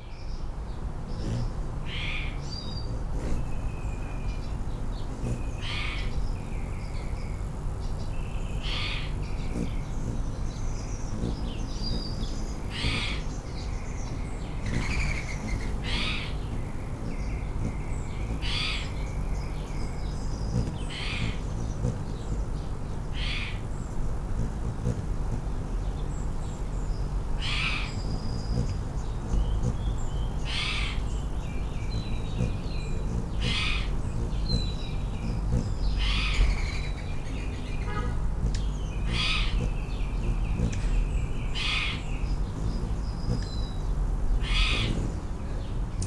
Emerald Dove Dr, Santa Clarita, CA, USA - Sunday Soundscape
This morning I captured a whole slew of Sunday morning sounds including many hummingbirds, a neighbor and his dog, cars, planes, helicopters.